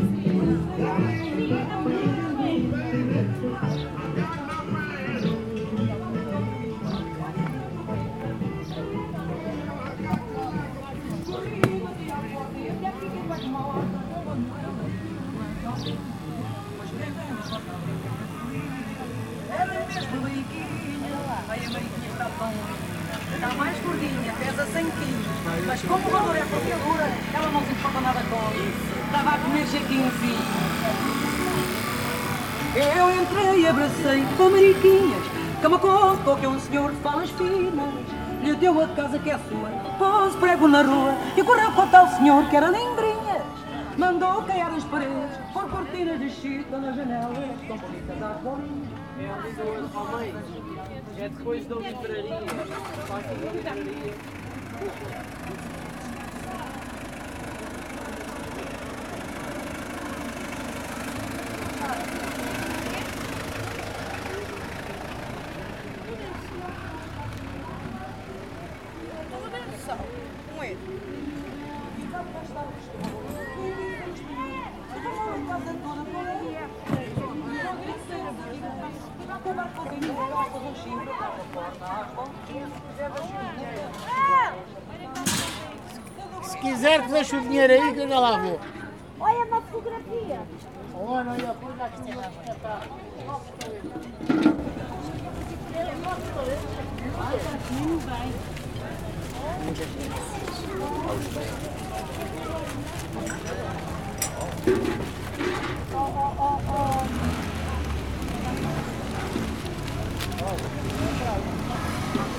Lisbonne, Portugal - Feira da Ladra: flea market
Feira da Ladra: flea market that takes place every Tuesday and Saturday in the Campo de Santa Clara (Alfama)
Lisboa, Portugal, 20 June 2015